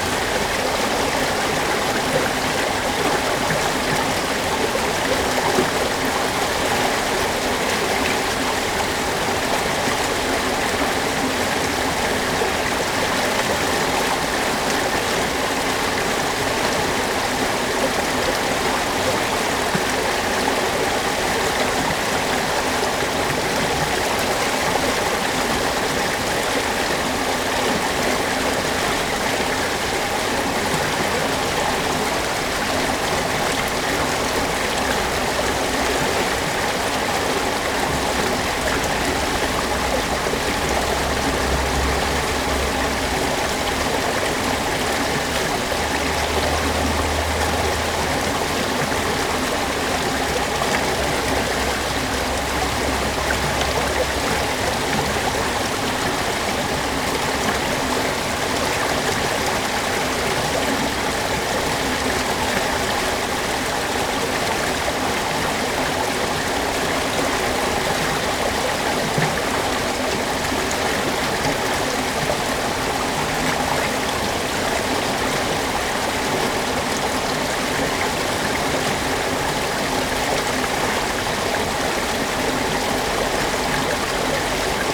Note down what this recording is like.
Mill race ... Caudwell Mill ... Rowsley ... lavalier mics clipped to clothes pegs ... fastened to sandwich box ...